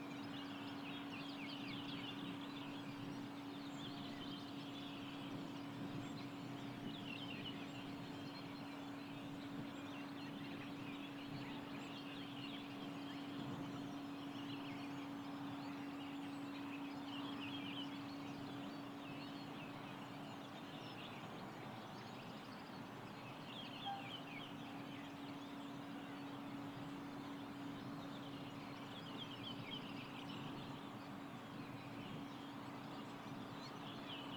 Olsztyn, Polska - Track lake - land side
Polska, European Union, 13 April 2013